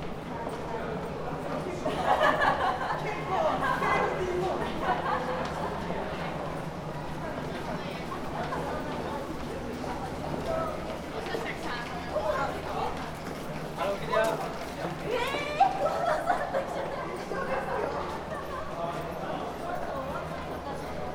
{
  "title": "Osaka, underpass towards Sky Building - underpass steps",
  "date": "2013-03-30 22:22:00",
  "latitude": "34.70",
  "longitude": "135.49",
  "altitude": "3",
  "timezone": "Asia/Tokyo"
}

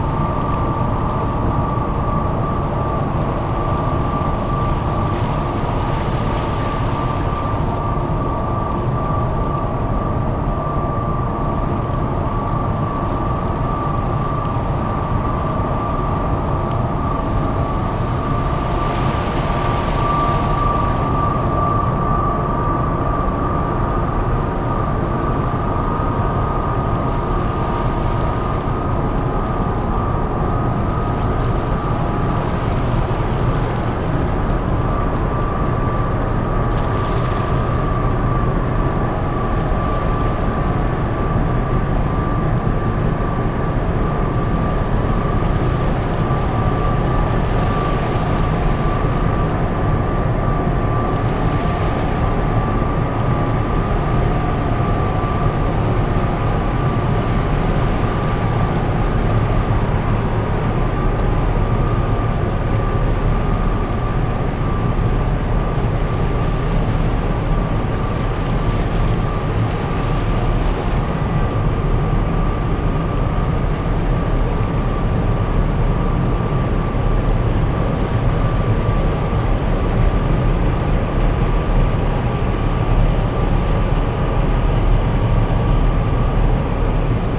{
  "title": "Port de Plaisance des Sablons, Saint-Malo, France - Arrival of the ferry at the Saint-Malo seaport",
  "date": "2016-12-23 08:00:00",
  "description": "Arrival of the ferry at the Saint-Malo seaport\nNice weather, sunny, no wind, calm and quiet sea.\nRecorded from the jetty with a H4n in stereo mode.\nMotors from the ferry.\nMachines from the ramp for passengers.\nPeople passing by, adults and kids talking.\nRamp for passengers",
  "latitude": "48.64",
  "longitude": "-2.03",
  "timezone": "Europe/Paris"
}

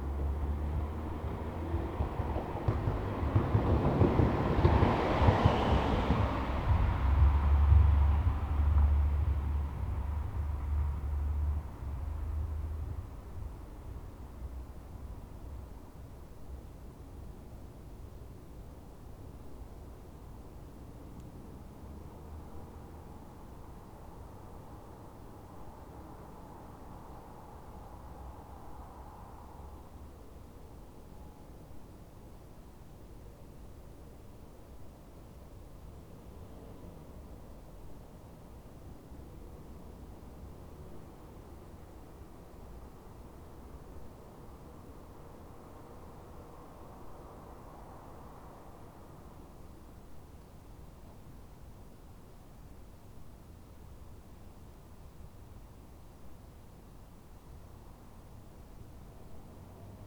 {
  "title": "mainz-gonsenheim, weserstraße: garten - the city, the country & me: garden",
  "date": "2010-10-15 22:53:00",
  "description": "cars passing over bumps\nthe city, the country & me: october 15, 2010",
  "latitude": "50.00",
  "longitude": "8.22",
  "altitude": "123",
  "timezone": "Europe/Berlin"
}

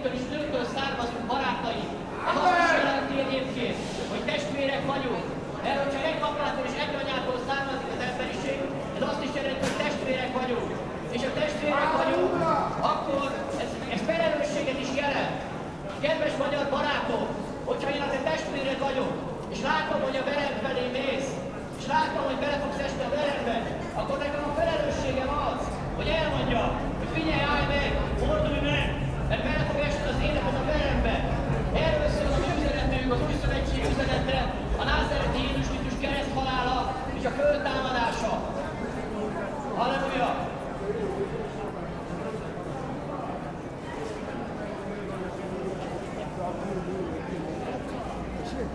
{
  "title": "Nyugati téri aluljáró, Budapest, Ungarn - Hallelujah",
  "date": "2014-01-25 18:40:00",
  "description": "Strange kind of speakers' corner in the metro underpass",
  "latitude": "47.51",
  "longitude": "19.06",
  "timezone": "Europe/Budapest"
}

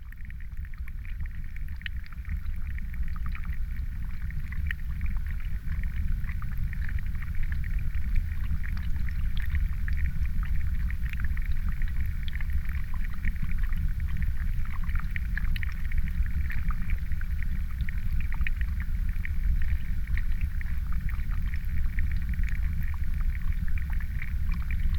{
  "title": "Naujakiemis, Lithuania, little dam",
  "date": "2019-10-03 16:40:00",
  "description": "some kind of little \"dam\": water flows from pond into stream. hydrophone placed on metallic part of the \"dam\"",
  "latitude": "55.55",
  "longitude": "25.59",
  "altitude": "113",
  "timezone": "Europe/Vilnius"
}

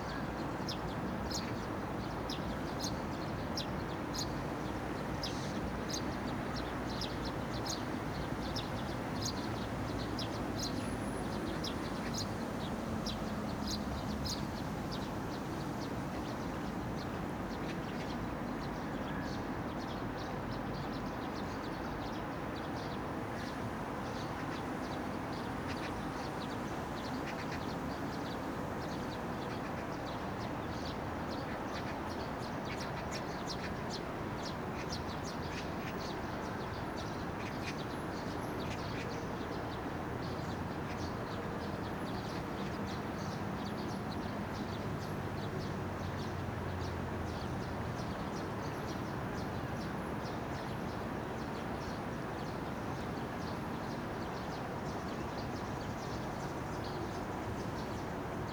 {
  "title": "대한민국 서울특별시 서초구 양재동 261-23 - Yangjaecheon, Summer, Bus, Sparrow",
  "date": "2019-07-27 15:30:00",
  "description": "Yangjaecheon Stream, Summer, Monsoon, Sparrow, vehicle passing by\n양재천, 여름, 장마철, 참새",
  "latitude": "37.48",
  "longitude": "127.04",
  "altitude": "30",
  "timezone": "Asia/Seoul"
}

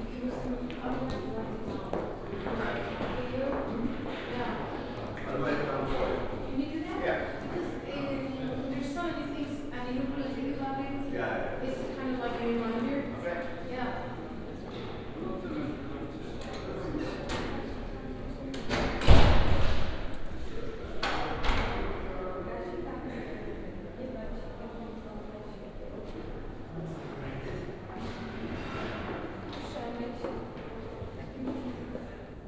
{
  "title": "Leipzig, Mediencampus - coffee break",
  "date": "2012-01-28 11:10:00",
  "description": "Mediencampus Leipzig, thinktank about the radio feature in the digital age, coffee break, hall ambience\n(tech: Olympus LS5, OKM, binaural)",
  "latitude": "51.36",
  "longitude": "12.36",
  "altitude": "110",
  "timezone": "Europe/Berlin"
}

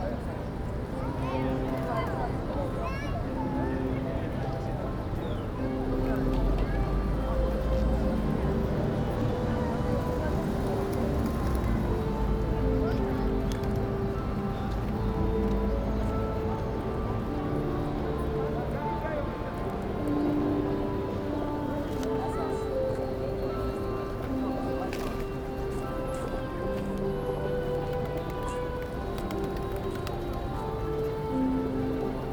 {
  "title": "Aristotelous Square, Thessaloniki, Greece - piazza",
  "date": "2014-08-08 11:31:00",
  "latitude": "40.63",
  "longitude": "22.94",
  "altitude": "12",
  "timezone": "Europe/Athens"
}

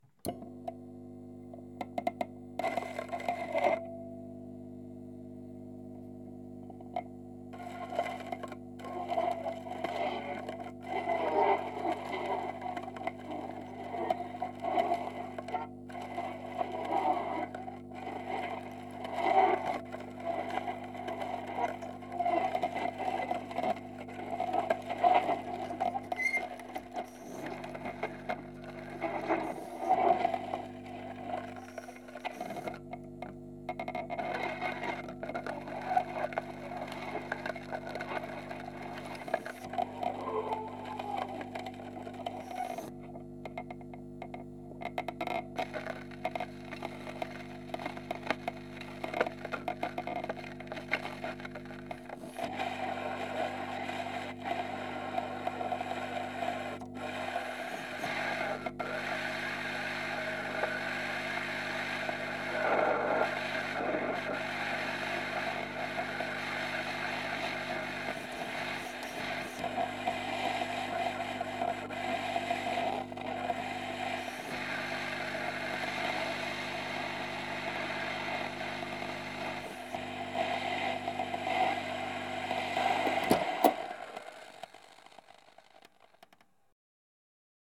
{
  "title": "Marsannay-la-Côte, France - Old turntable found in my parents house",
  "date": "2015-08-08 02:19:00",
  "description": "Old turntable found in my parents house\nRec: Zoom H4N",
  "latitude": "47.28",
  "longitude": "4.99",
  "altitude": "275",
  "timezone": "Europe/Paris"
}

